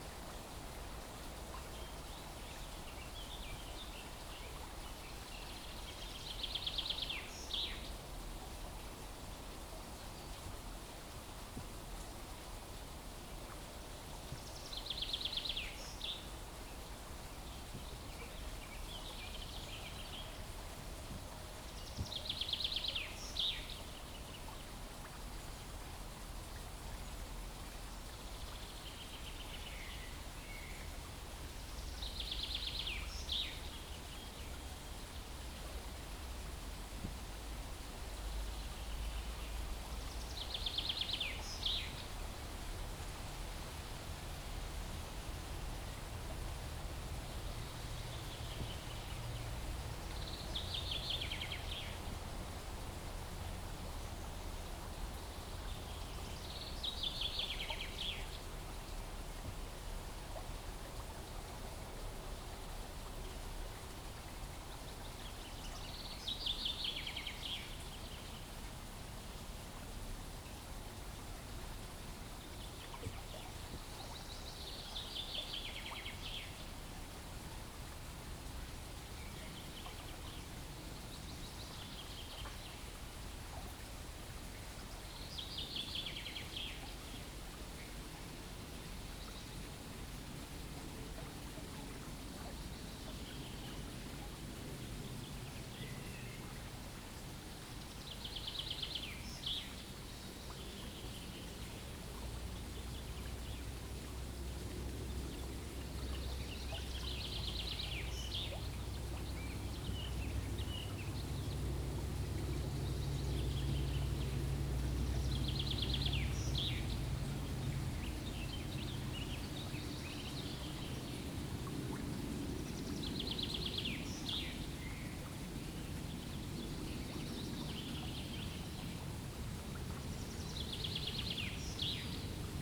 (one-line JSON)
{"title": "Central Park, Helsingfors, Finland - Stream in the midsummer morning", "date": "2020-06-19 08:40:00", "description": "Ambient field recording for Central Park Archives project 2020.\nRecorded with Zoom H4n.", "latitude": "60.23", "longitude": "24.91", "altitude": "27", "timezone": "Europe/Helsinki"}